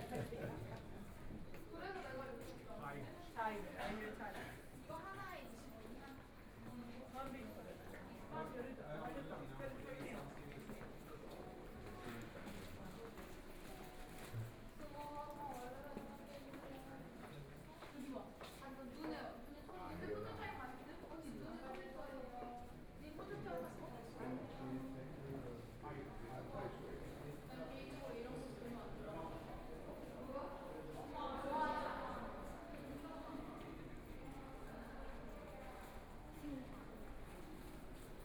Town God's Temple, Shanghai - in the Temple
walking through tthe Temple, Binaural recording, Zoom H6+ Soundman OKM II
28 November 2013, 14:56